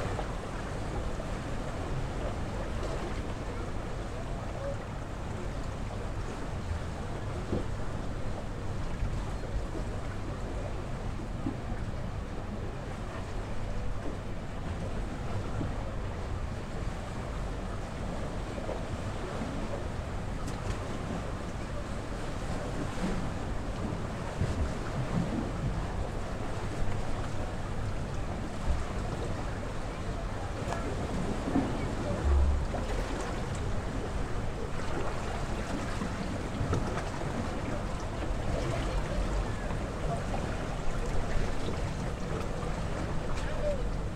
Chania, Crete, at the lighthouse
on the stones at the lighthouse
Chania, Greece